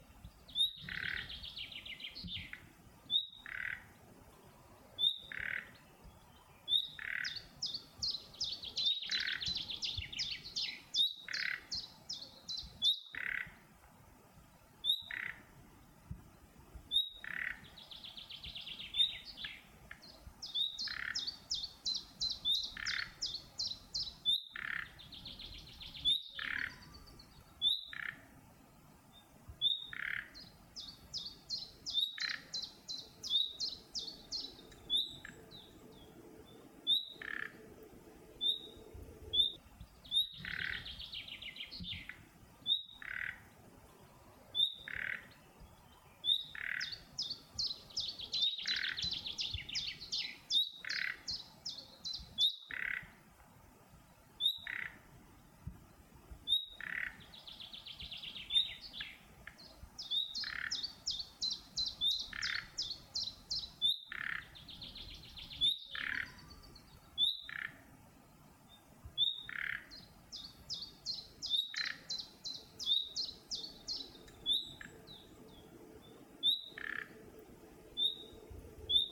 Dessau-Roßlau, Deutschland - Oberluch Roßlau, Natur, Vogelstimmen
temporäres Überflutungsgebiet der Elbaue bei Roßlau, ehemaliges militärisches Versuchsgelände, weite Wiesenflächen mit Gehölzbestand und Hecken, Vogelstimmen u.a. mit Kohlmeise